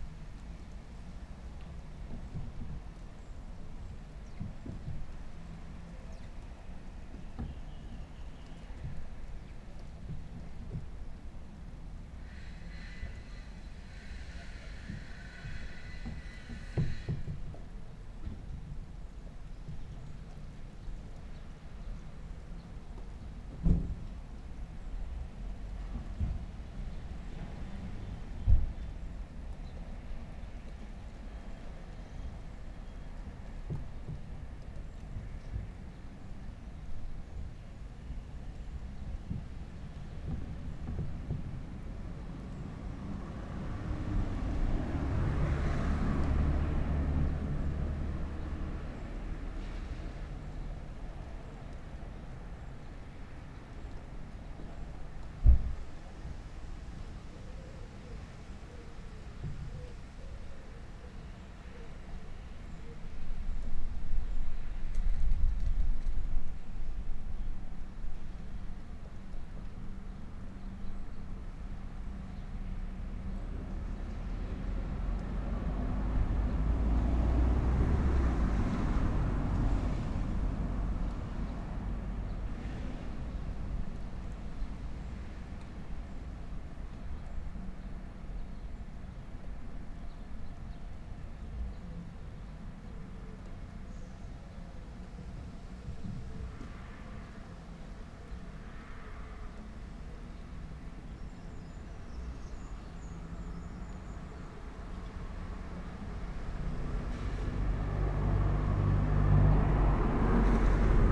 Middlewich, UK - Soundscape of Lockdown for World Listening Day
Recording from my garden just as lockdown is really easing, on World Listening Day using Rode microphones in ORTF configuration onto a Zoom F6 recorder. Weather conditions are light rain #wld2020 #worldisteningday